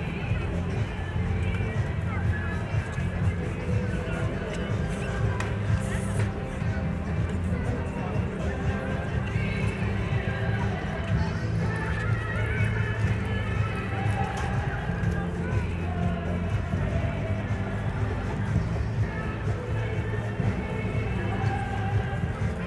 ambience above the tempelhof harbour. the area on this Saturday afternoon was dedicated to shopping and leisure activity
(SD702, DPA4060)
Berlin, Germany, 6 September 2014, ~16:00